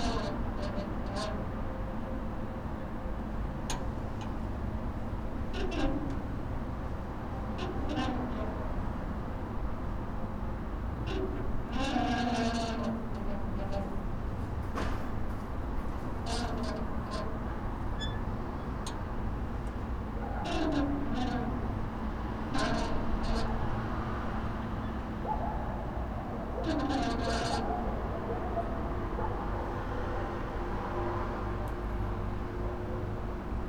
Risiera di San Sabba, Trieste, Italy - front door to the prison cells - squeaks ...